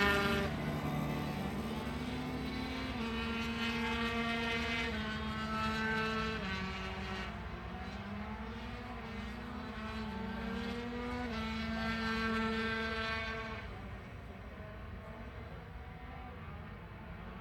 british motorcycle grand prix 2005 ... 125 qualifying ... one point stereo mic to mini disk ...
Derby, United Kingdom, 22 August 2005